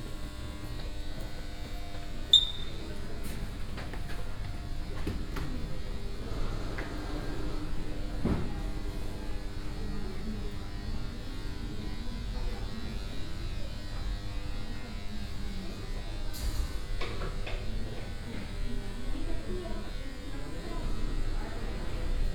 (binaural) walking around one of the floors. the space is filled with sound of buzzing fluorescent lamps. very dense grid of imposing buzz. there is no place on the floor where you can escape it. wonder if the employees notice it and if it bothers them.
Madrid, Spain, 30 November 2014